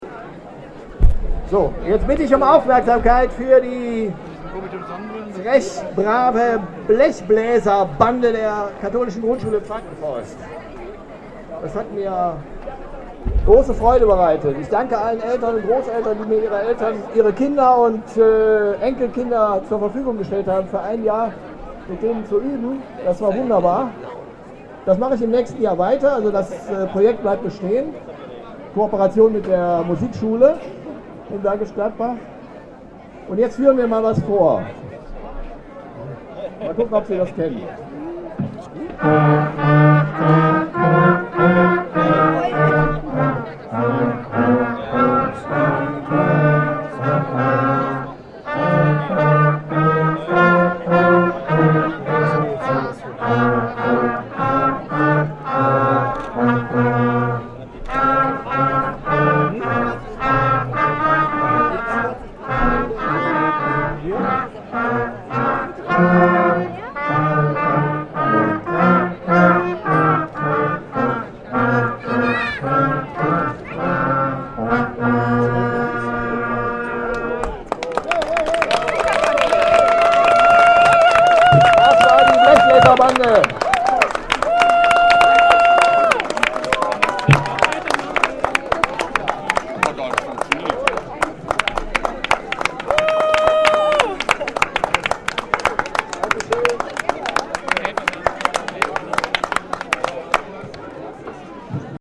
Bergisch Gladbach, Frankenforst, KGS Taubenstraße, Schulfest 2010, Blechbläserbande, Ode an die Freude
Bergisch Gladbach, Germany, 10 July 2010, 12:30